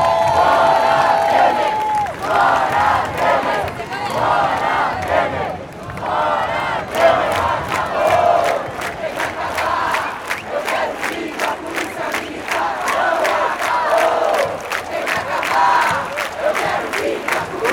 Sound Recording of the demonstration against the murder of Marielle Franco a few days ago.
Recorded on Avenida Paulista in Sao Paulo, on 15th of March.
Recording by a ORTF Schoeps CCM4 setup on a Cinela Suspension+windscreen.
Recorded on a Sound Devices 633
- Bela Vista, São Paulo - SP, Brazil, 15 March 2018